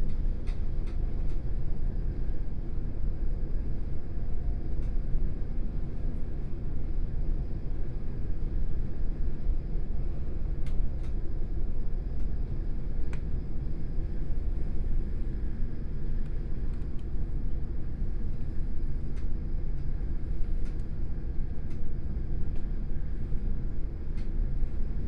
Ismaning, Germany - S Bahn
S Bahn, Line 8
6 May 2014